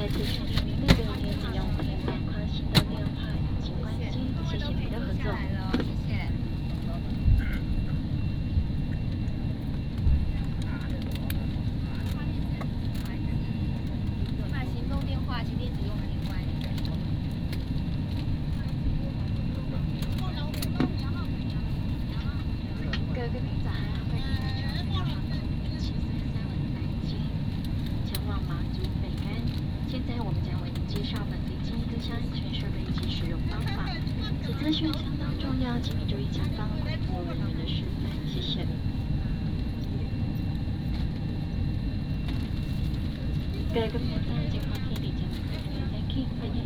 Taipei Songshan Airport, Taiwan - Into the aircraft
Into the aircraft